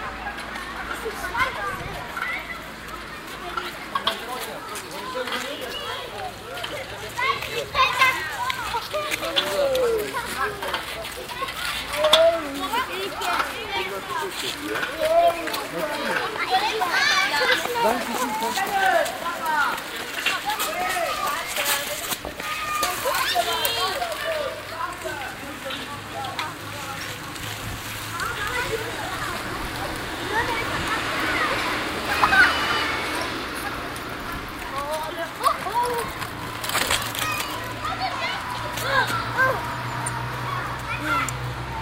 monheim, berliner ring, kinder am strasse - monheim, berliner ring, kinder an strasse
afternoon, kids playing on the streets, traffic
soundmap nrw:
social ambiences/ listen to the people - in & outdoor nearfield recordings